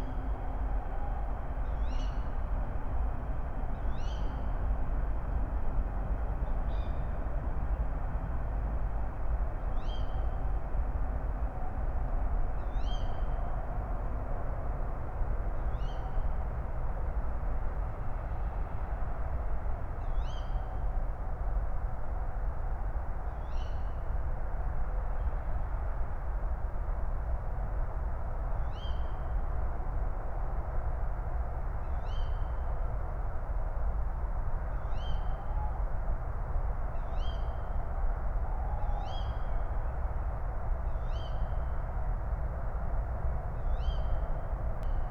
{"title": "Berlin, Buch, Am Sandhaus - forest edge, former Stasi hospital, traffic howl /w tawny owl", "date": "2021-03-03 05:30:00", "description": "distant traffic howl from the Autobahn ring, Tawny owls, male and female, female calls reflecting at the hospital building\n(remote microphone: AOM5024/ IQAudio/ RasPi Zero/ LTE modem)", "latitude": "52.64", "longitude": "13.48", "altitude": "62", "timezone": "Europe/Berlin"}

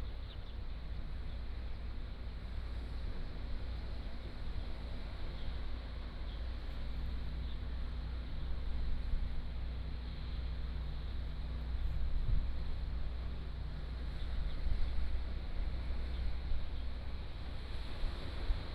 {"title": "珠螺村, Nangan Township - On the coast", "date": "2014-10-15 09:36:00", "description": "On the coast, Sound of the waves", "latitude": "26.16", "longitude": "119.93", "altitude": "7", "timezone": "Asia/Taipei"}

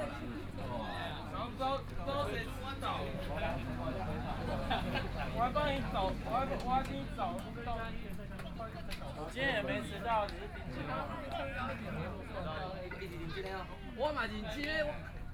{"title": "羅東鎮仁愛里, Yilan County - Softball game", "date": "2014-07-27 13:41:00", "description": "Softball game, Hot weather, Traffic Sound\nSony PCM D50+ Soundman OKM II", "latitude": "24.69", "longitude": "121.75", "altitude": "11", "timezone": "Asia/Taipei"}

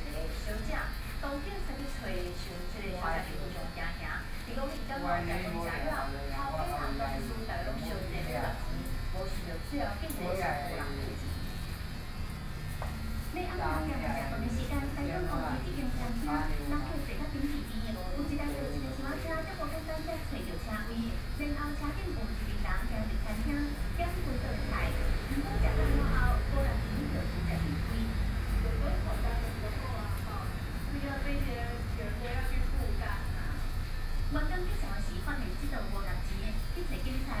{"title": "Beitou - In the barber shop", "date": "2013-07-31 17:21:00", "description": "In the barber shop, Sony PCM D50 + Soundman OKM II", "latitude": "25.14", "longitude": "121.50", "altitude": "23", "timezone": "Asia/Taipei"}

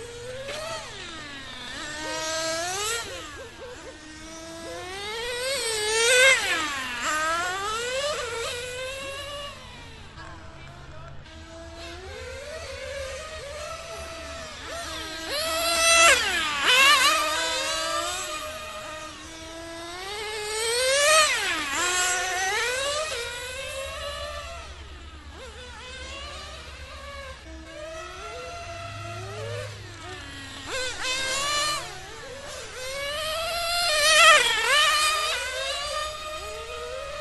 {"title": "Radio Controlled Racing Cars, Littlehampton, South Australia - Radio Controlled Racing Cars", "date": "2009-04-03 22:42:00", "description": "Recorded Saturday 4 Apr 2009 at 13:50\nRadio Controlled Racing Car Club in Littlehampton.", "latitude": "-35.05", "longitude": "138.86", "altitude": "300", "timezone": "Europe/Berlin"}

